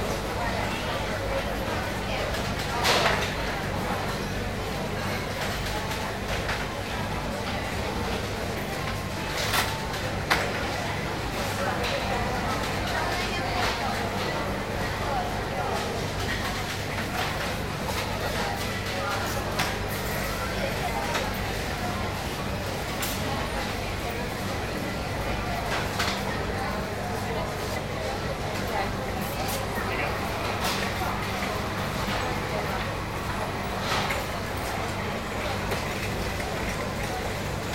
budapest, blaha lujza tér, supermarket
inside an hungarian, german supermarket, crowded with trolleys passing bye
international city scapes and social ambiences